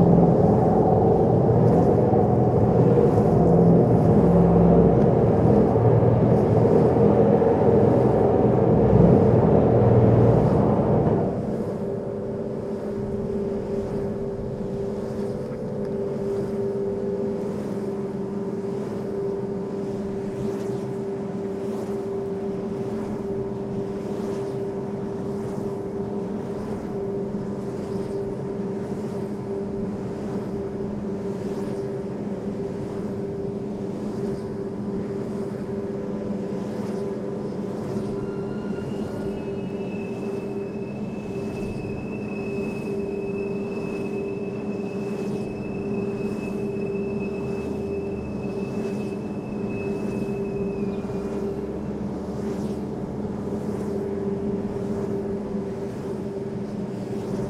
Bouin, France - rotating motor Wind turbine
Une Eolienne change d'axe, présence de grillons.
The wind turbine was about to change its axis.
Crickets at the end.
/Oktava mk012 ORTF & SD mixpre & Zoom h4n
19 July 2016, 14:20